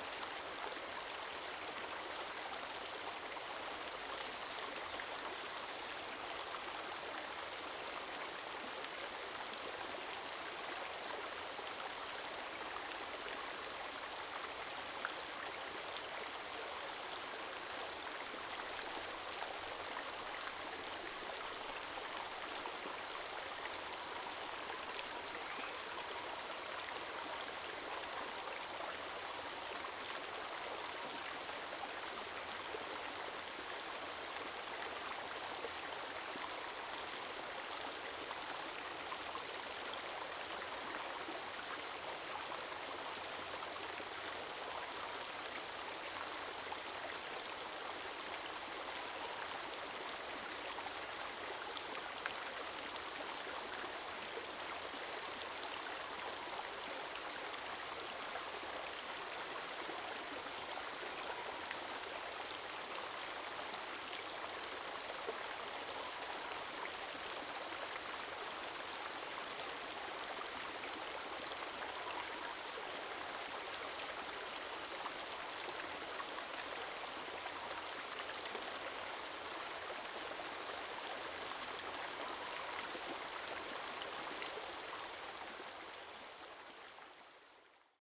Streams, The sound of water streams
Zoom H2n Spatial audio